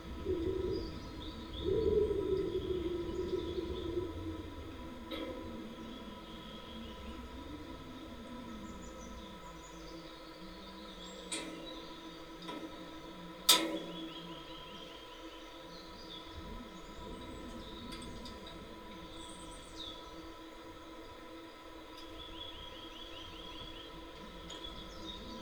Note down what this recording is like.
contacy microphones placed on abandoned bridge railing